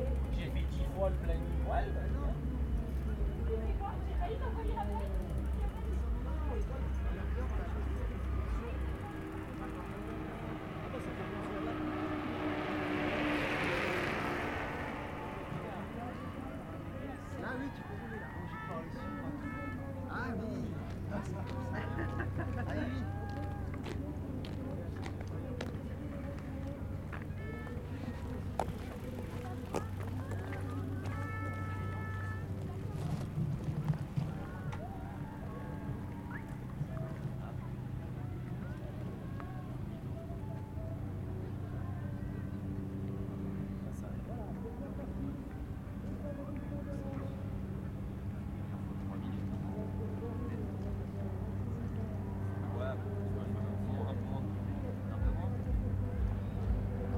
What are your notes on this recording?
Le passage des 2500 engagés des 10km du lac, course à pied organisée par l'ASA Aix-les-bains depuis de nombreuses années. à 1000m du départ.